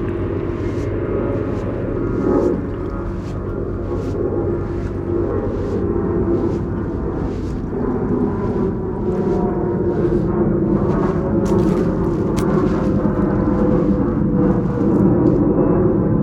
kill van kull staten island
dredging boats, planes, waves, distant birds